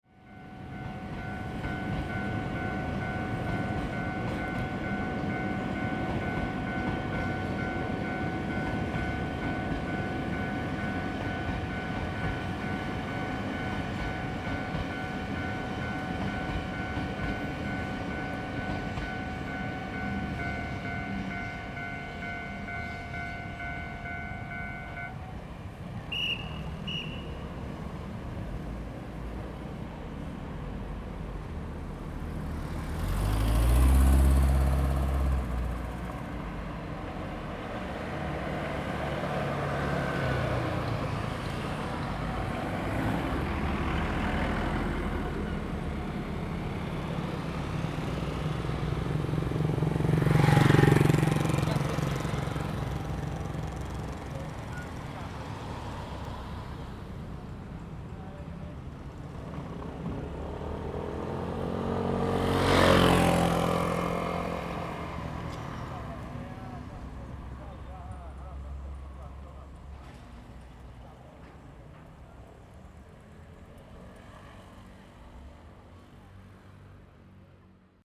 Gengsheng Rd., Yuli Township - In the railway level crossing
In the railway level crossing, Train traveling through
Zoom H2n MS +XY